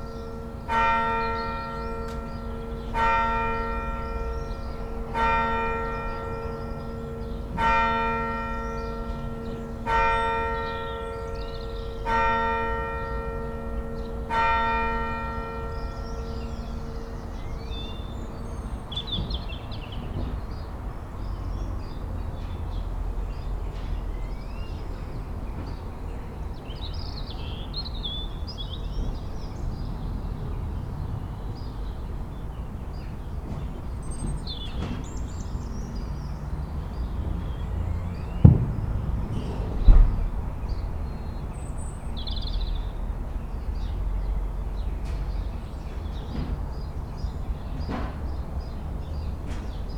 Church St, Kirkbymoorside, York, UK - inside church porch ... waiting for nine o clock ...
inside church porch ... waiting for nine o'clock ... All Saints Church ... lavalier mics clipped to sandwich box ... the church clocks strikes nine at 05:12 ... bird calls ... song from ... dunnock ... starling ... blue tit ... collared dove ... blackbird ... goldfinch ... crow ... house sparrow ... robin ... wood pigeon ... jackdaw ... background noise ...